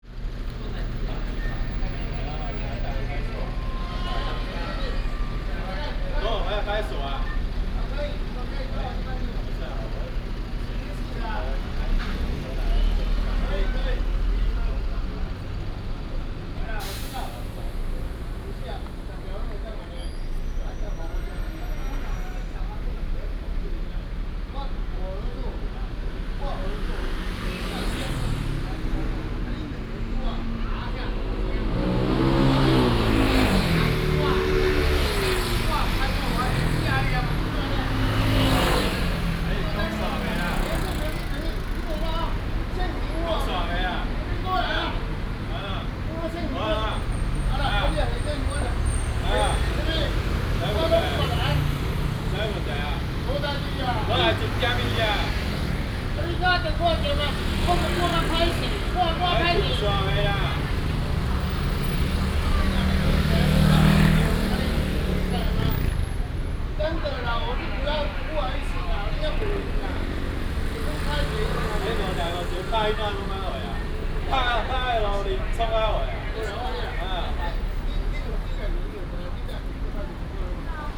The police are stopping a deceased father, Traffic sound